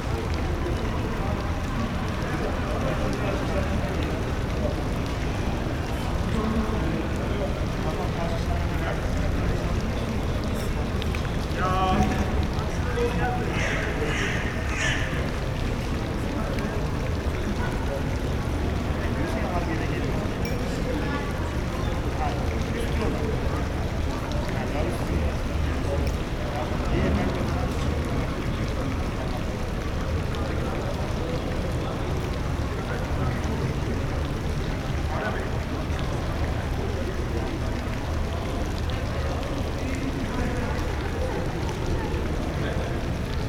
large open courtyard at the Architecture faculty of Istanbul Technical University